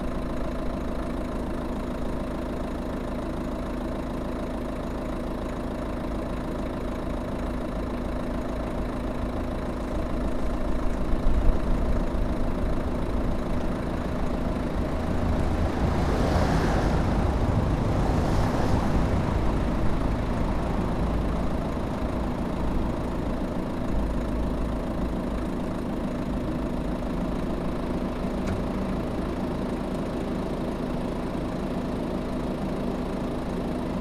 berlin: friedelstraße - the city, the country & me: windy night
windy night, small flags of a nearby toyshop fluttering in the wind, someone warming up the motor of his car, taxis, passers by
the city, the country & me: february 1, 2013